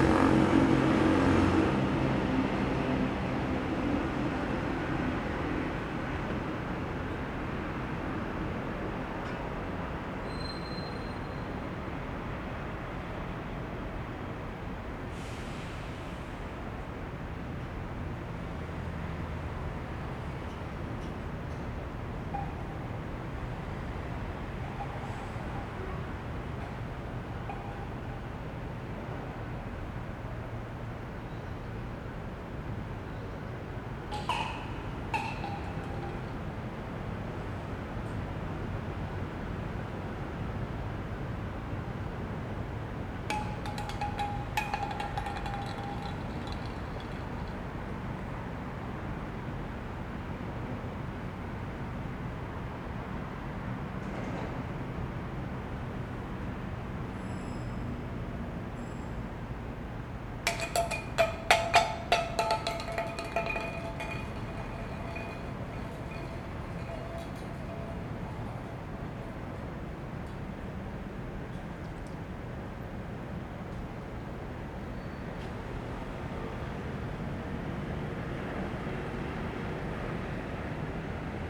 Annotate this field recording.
The Station Square at night, Sony ECM-MS907, Sony Hi-MD MZ-RH1